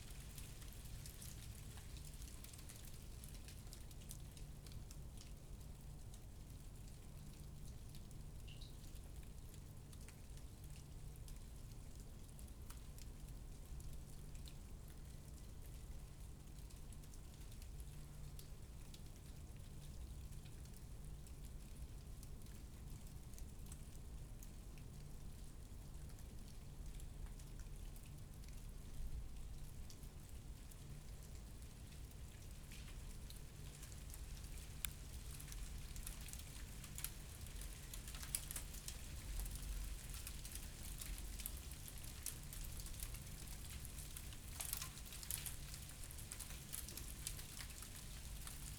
Berlin Bürknerstr., backyard window - light ice rain
late night in February, a light ice rain begins
(Sony PCM D50, DPA4060)